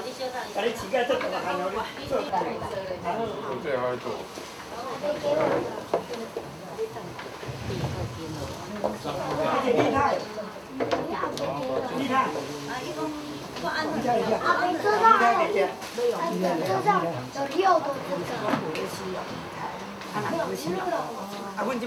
Long’an Rd., Xizhi Dist., New Taipei City - Traditional Wedding Ceremony
Traditional Wedding Ceremony
Sony Hi-MD MZ-RH1 +Sony ECM-MS907
Xizhi District, New Taipei City, Taiwan, December 2010